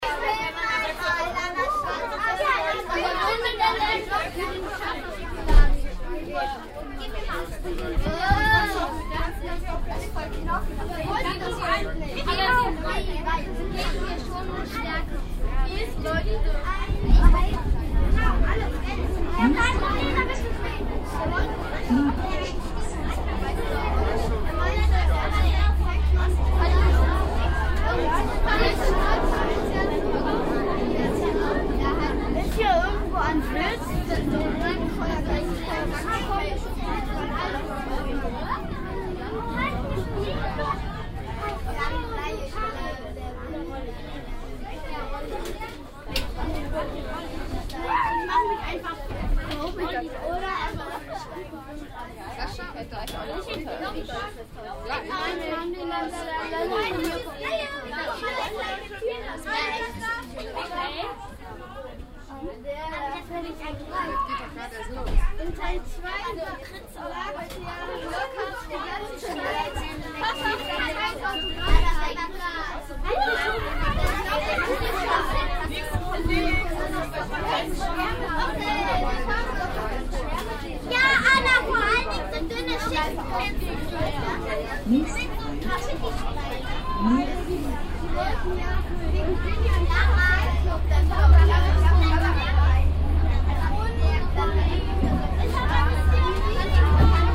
cologne, strassenbahnfahrt, nächster halt merheim
soundmap: köln/ nrw
strassenbahnfahrt morgens mit der linie 1 - flehbachstr richtung merheim, in der bahn schulkinder auf ausflug
project: social ambiences/ listen to the people - in & outdoor nearfield recordings
2 June 2008, 18:39